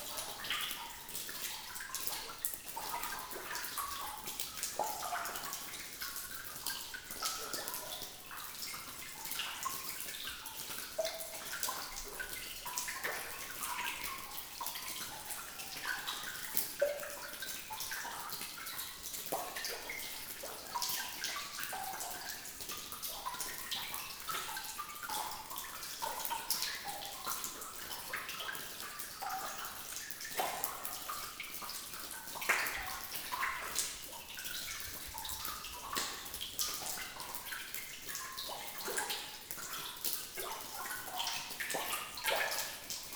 Montagnole, France - Quiet atmosphere into the underground mine
Into an underground cement mine, drops are falling into a large lake. It makes a quiet and pleasant sound, with a few reverb as it's a quite big room.
6 June